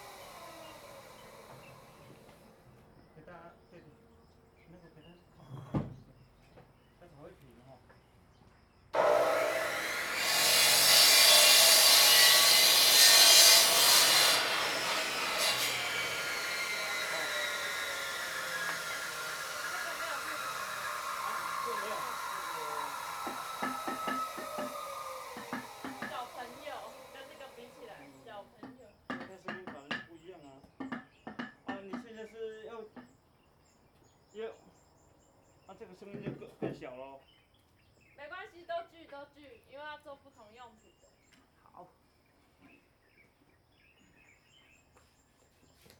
體驗廚房, 埔里鎮桃米里 - Saw Bamboo
Saw Bamboo
Zoom H2n MS+XY
Nantou County, Puli Township, 桃米巷71號, 18 May 2016, 10:01